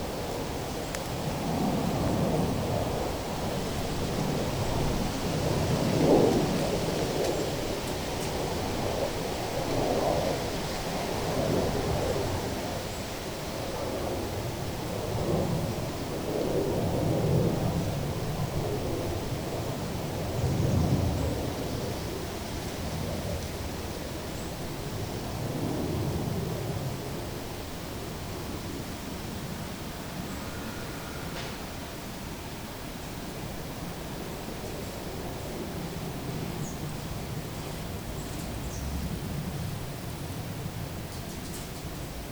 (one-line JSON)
{"title": "berlin wall of sound-near vivantes klinikum j.dickens160909", "latitude": "52.57", "longitude": "13.15", "altitude": "34", "timezone": "Europe/Berlin"}